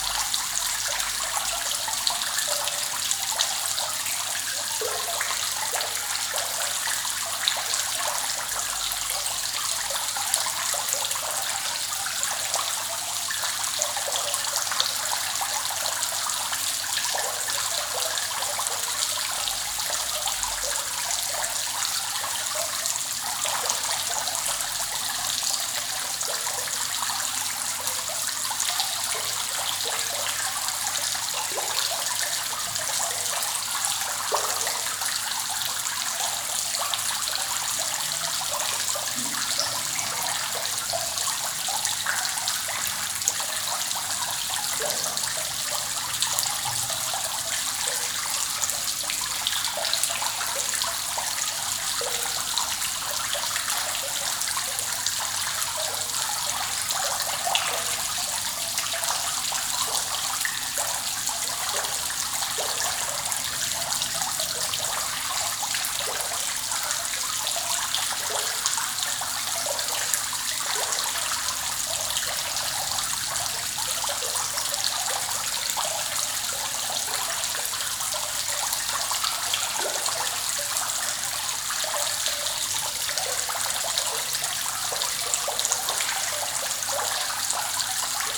Paris, rue de la villette, fontaine d'intérieur - 36-42 rue de la villette, Paris, fontaine d'intérieur
France, Paris, Fontain, water, hall
Paris, France